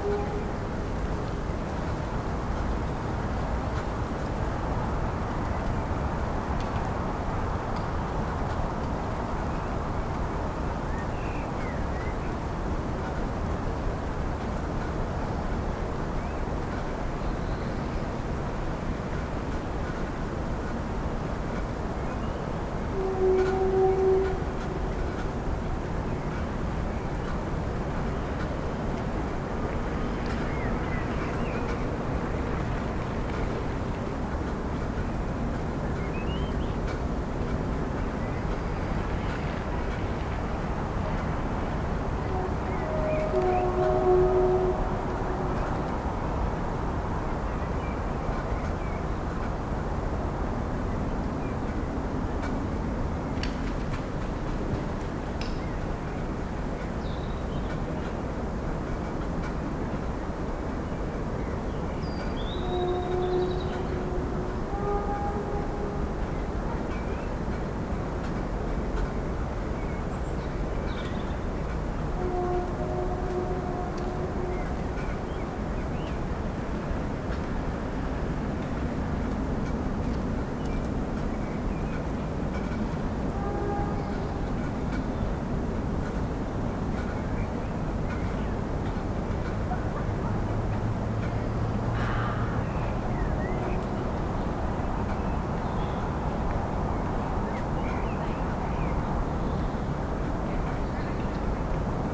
Rożana 2 - Park Grabiszyński słyszany z werandy
Słońce uformowało się około 4,567 mld lat temu na skutek kolapsu grawitacyjnego obszaru w dużym obłoku molekularnym. Większość materii zgromadziła się w centrum, a reszta utworzyła orbitujący wokół niego, spłaszczony dysk, z którego ukształtowała się pozostała część Układu Słonecznego. Centralna część stawała się coraz gęstsza i gorętsza, aż w jej wnętrzu zainicjowana została synteza termojądrowa. Naukowcy sądzą, że niemal wszystkie gwiazdy powstają na skutek tego procesu. Słońce jest typu widmowego G2 V, czyli należy do tzw. żółtych karłów ciągu głównego; widziane z Ziemi ma barwę białą. Oznaczenie typu widmowego „G2” wiąże się z jego temperaturą efektywną równą 5778 K (5505 °C), a oznaczenie klasy widmowej „V” wskazuje, że Słońce, należy do ciągu głównego gwiazd i generuje energię w wyniku fuzji jądrowej, łącząc jądra wodoru w hel. Słońce przetwarza w jądrze w ciągu sekundy około 620 mln ton wodoru.
22 April, ~19:00